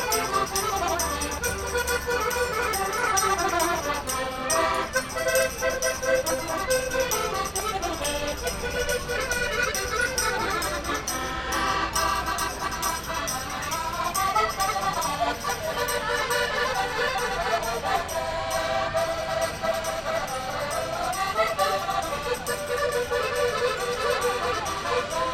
Peso da Régua, Portugal - Estacao ferroviaria de Peso da Regua
Estacao da Regua, Portugal. Mapa Sonoro do rio Douro. Peso da Reguas railway station. Douro River Sound Map
10 July 2010